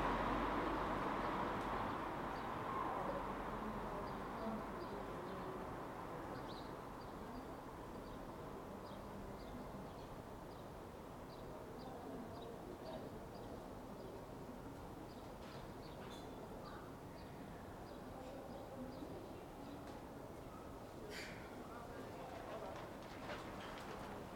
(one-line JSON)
{"title": "Rue Proudhon, Saint-Denis, France - Pallissade and wind in a very little street-YC", "date": "2020-04-12 21:34:00", "description": "Le long de palissade de chantier, du vent les agite, a St Denis durant le confinement", "latitude": "48.91", "longitude": "2.36", "altitude": "39", "timezone": "Europe/Paris"}